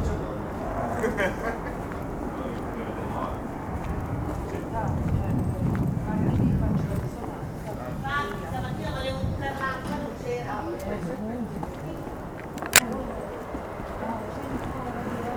Carpiano (MI), Italy - Saturday morning on main street
lazy autumn morning in the small village. People walking, Old men "i vècc" speaking dialect in front of the bar